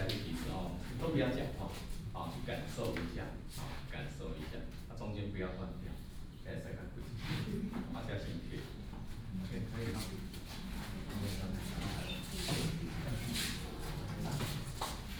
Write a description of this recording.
Elderly choir, Vocal exercises